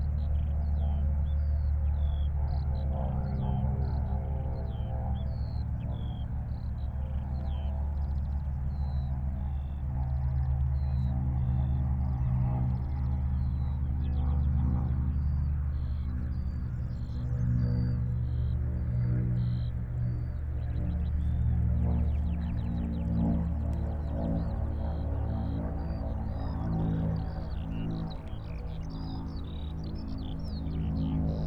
Tempelhofer Feld, Berlin - sky larcs, field ambience, WW2 aircraft
warm and sunny day in late spring, high grass, the meadows are protected from access by barrier tape because of bird protection, mainly sky larcs. 3 former WW2 aircrafts (not sure though..) passing-by, direction south east, maybe a transport from Tegel to Schönefeld airport.
(SD702, MKH8020 AB)